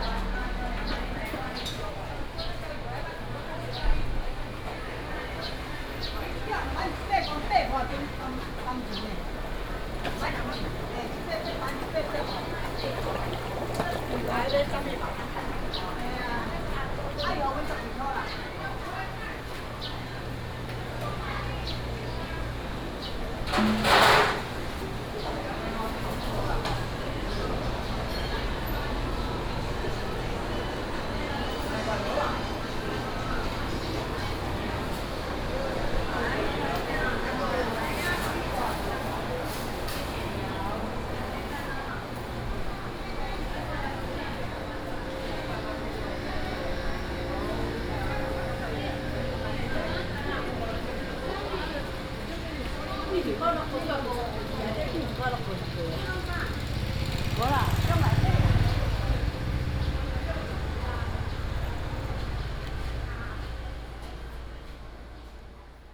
{"title": "烏日市場, Taichung City - Public retail market", "date": "2017-09-24 11:14:00", "description": "walking in the Public retail market, traffic sound, vendors peddling, Binaural recordings, Sony PCM D100+ Soundman OKM II", "latitude": "24.11", "longitude": "120.62", "altitude": "35", "timezone": "Asia/Taipei"}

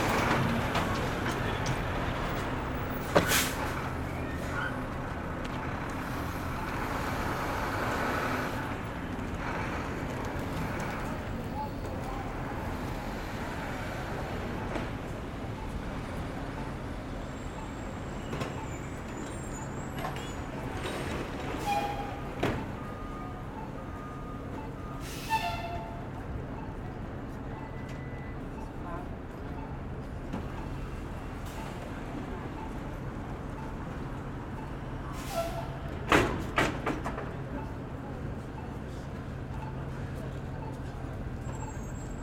{"title": "Jay St - MetroTech, Brooklyn, NY 11201, USA - Worker unloading cases of beverages from a truck", "date": "2022-03-30 11:10:00", "description": "Sounds from a worker unloading cases of beverages from a truck.", "latitude": "40.69", "longitude": "-73.99", "altitude": "49", "timezone": "America/New_York"}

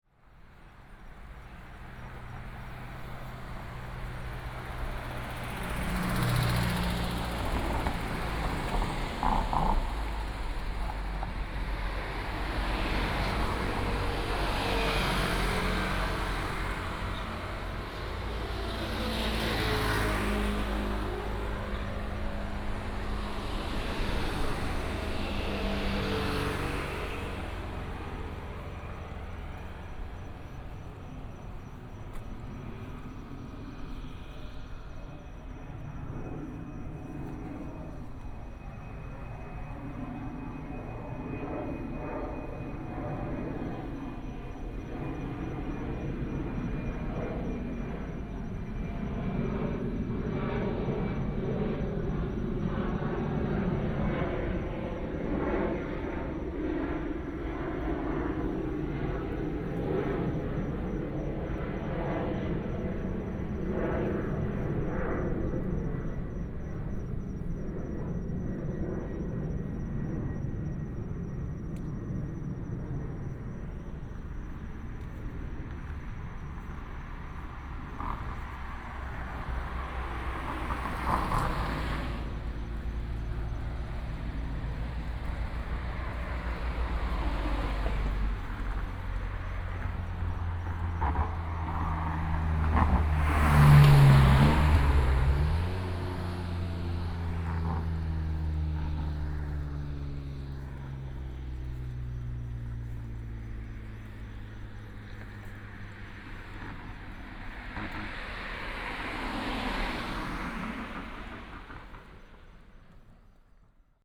{"title": "Ln., Sec., Minquan E. Rd., Neihu Dist., Taipei City - Walking on the road", "date": "2018-05-03 14:13:00", "description": "Plane flying through, Bird sound, Traffic sound", "latitude": "25.07", "longitude": "121.60", "altitude": "40", "timezone": "Asia/Taipei"}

